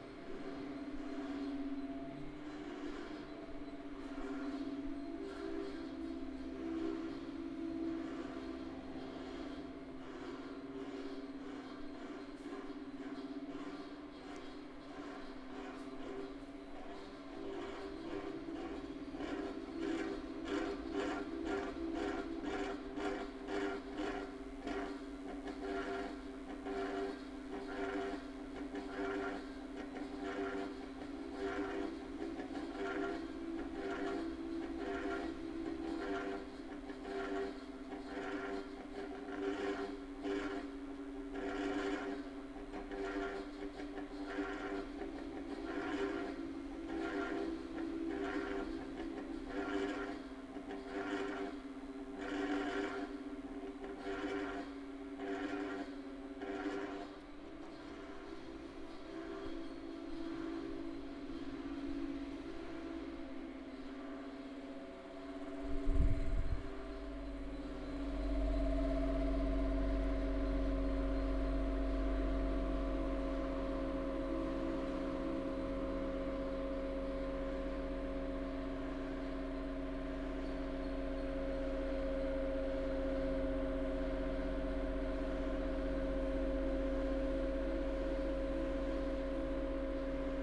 recording of the sound of oscillating fans at the photo museum next to the police check in office - hampi, india - feb. 2008
karnatika, india, 19 February 2007